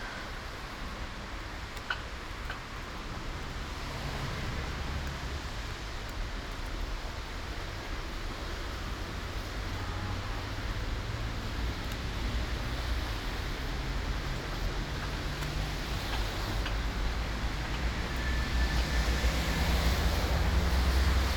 Ascolto il tuo cuore, città. I listen to your heart, city. Several chapters **SCROLL DOWN FOR ALL RECORDINGS** - Evening walk with bottles in the garbage bin in the time of COVID19 Soundwalk
"Evening walk with bottles in the garbage bin in the time of COVID19" Soundwalk
Chapter XCVII of Ascolto il tuo cuore, città. I listen to your heart, city
Thursday, June 4th 2020. Short walk in San Salvario district including discard of bottles waste, eighty-six days after (but day thirty-two of Phase II and day nineteen of Phase IIB and day thirteen of Phase IIC) of emergency disposition due to the epidemic of COVID19.
Start at 6:01 p.m. end at 6:24 p.m. duration of recording 22'45''
The entire path is associated with a synchronized GPS track recorded in the (kml, gpx, kmz) files downloadable here: